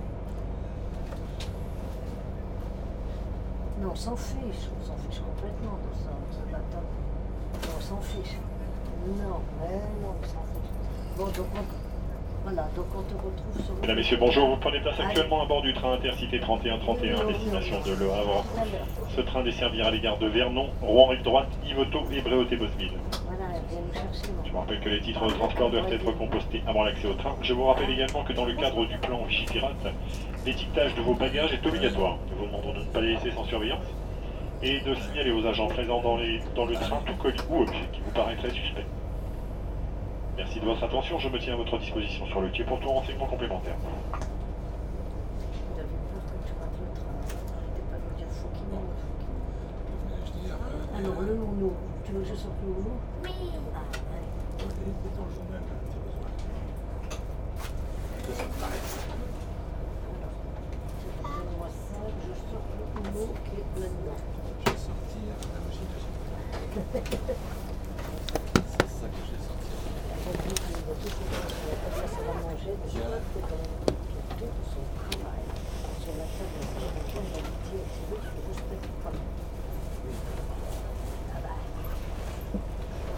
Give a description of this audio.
Taking the train from Paris to Le Havre. The neighbours are playing cards.